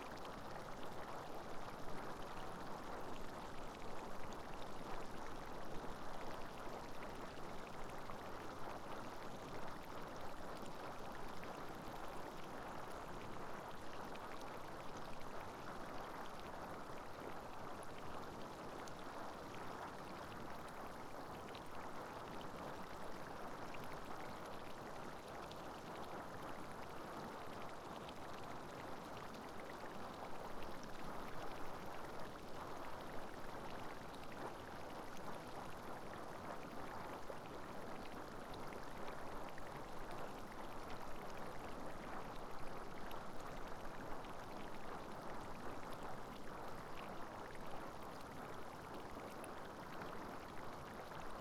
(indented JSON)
{
  "title": "Caldara di Manziana - Bigger spurt",
  "date": "2014-10-08 14:33:00",
  "description": "The biggest spurt in the background produces a lot of whiffs and splashes. Close to the recorder the mud produces a lot of tiny bubbles.\nThe audio has been cropped to eliminate plane's noises from the near airport.\nNo other modifications has been done.\nTASCAM DR100 MKII",
  "latitude": "42.09",
  "longitude": "12.10",
  "altitude": "255",
  "timezone": "Europe/Rome"
}